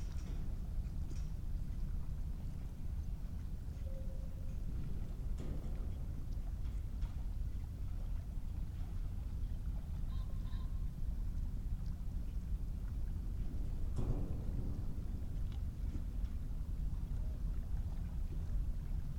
New road into Saemangeum wetland area, inside Saemangeum seawall - Steel fence across wetland
Inside the Saemangeum seawall, a 7ft steel sheet wall runs beside new roading into the tidal wetland.
Jeollabuk-do, South Korea, 4 May 2017